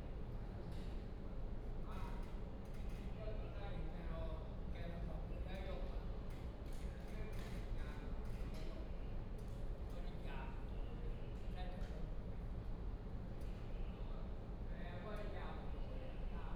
{"title": "桃園火車站, Taiwan - At the station platform", "date": "2017-09-26 12:16:00", "description": "At the station platform, Bird call, Station information broadcast, The train arrived at the stop, Binaural recordings, Sony PCM D100+ Soundman OKM II", "latitude": "24.99", "longitude": "121.31", "altitude": "100", "timezone": "Asia/Taipei"}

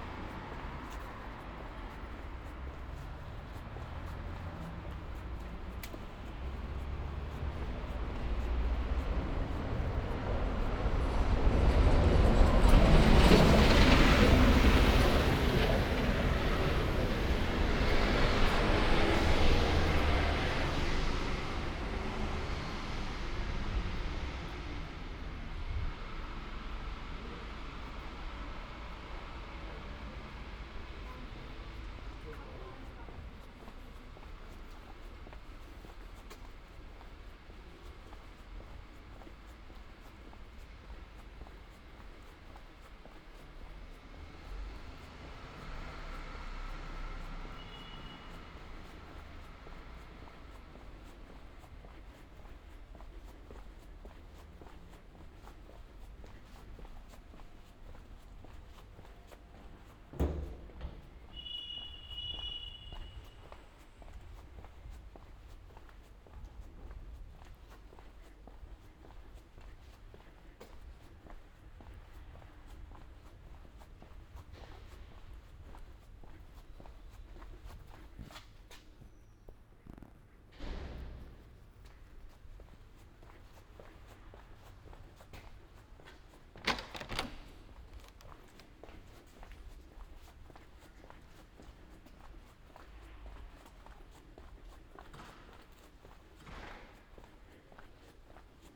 {"title": "Ascolto il tuo cuore, città. I listen to your heart. Fall - Monday night walk in San Salvario, before first curfew night, in the time of COVID19: Soundwalk", "date": "2020-10-26 22:47:00", "description": "\"Monday night walk in San Salvario, before first curfew night, in the time of COVID19\": Soundwalk\nMonday, October 26th 2020: first night of curfew at 11 p.m. for COVID-19 pandemic emergence. Round trip walking from my home in San Salvario district. Similar path as in previous Chapters.\nStart at 10:47 p.m. end at 11:26 p.m. duration 38’40”\nPath is associated with synchronized GPS track recorded in the (kmz, kml, gpx) files downloadable here:", "latitude": "45.06", "longitude": "7.69", "altitude": "241", "timezone": "Europe/Rome"}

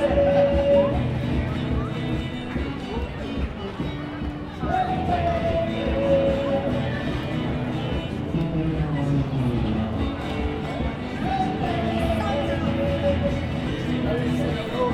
{"title": "neoscenes: Fortune of War Pub", "date": "2010-10-22 23:01:00", "latitude": "-33.86", "longitude": "151.21", "altitude": "19", "timezone": "Australia/Sydney"}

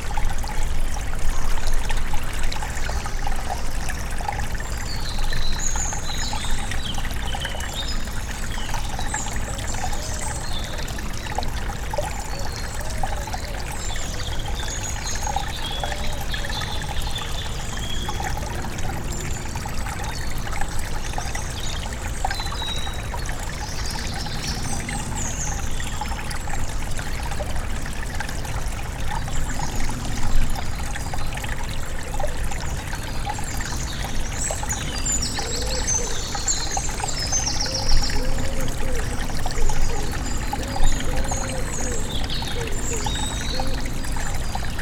{"title": "Brussels, Kinsendael natural reserve, along the Groelstbeek river", "date": "2012-01-10 11:46:00", "description": "SD-702, Me-64, NOS.", "latitude": "50.79", "longitude": "4.34", "altitude": "59", "timezone": "Europe/Brussels"}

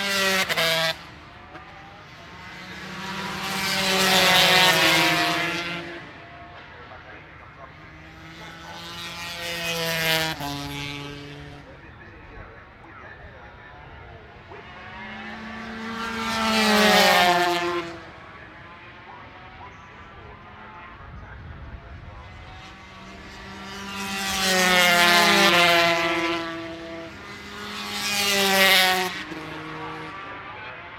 {"title": "Unnamed Road, Derby, UK - British Motorcycle Grand Prix 2004 ... 250 warm up ...", "date": "2004-07-25 09:30:00", "description": "British Motorcycle Grand Prix 2004 ... 250 warm up ... one point stereo mic to minidisk ...", "latitude": "52.83", "longitude": "-1.37", "altitude": "74", "timezone": "Europe/London"}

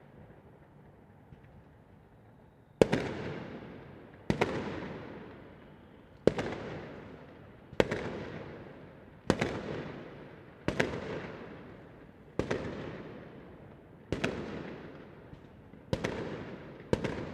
{"title": "Rotterdam, Pieter de Hoochweg, Rotterdam, Netherlands - New Year´s eve fireworks", "date": "2022-01-01 00:40:00", "description": "This was the second year in a row in which fireworks are forbidden in the Nederlands, allegedly, due to covid-19. With this measure, authorities intend to prevent that people suffer accidents and coming to emergency services to be treated. The previous year, it was possible to hear a few detonations here and there, however, this year people just decided to ignore this measure. Recorded with zoom H8", "latitude": "51.91", "longitude": "4.46", "altitude": "7", "timezone": "Europe/Amsterdam"}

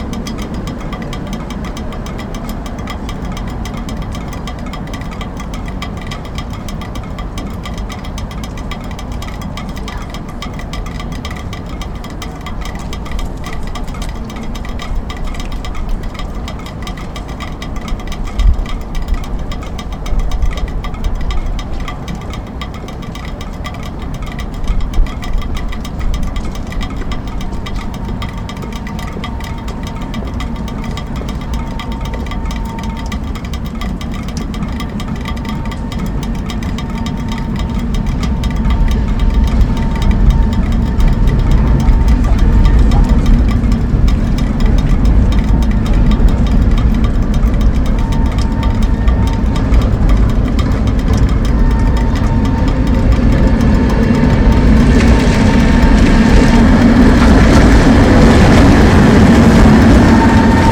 {"title": "Palmovka, dripping water", "date": "2011-09-04 02:06:00", "description": "Raindrops resonating inside the gutters at the tramway comapny building, next to the New Synagogue at Palmovka.", "latitude": "50.10", "longitude": "14.47", "altitude": "192", "timezone": "Europe/Prague"}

